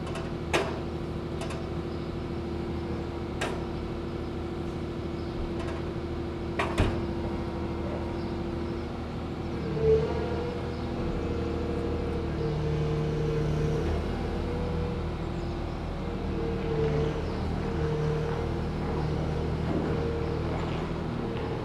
berlin: manitiusstraße - the city, the country & me: demolition of a supermarket
grab excavator demolishes the roof of a supermarket
the city, the country & me: january 23, 2012
Berlin, Germany, 23 January 2012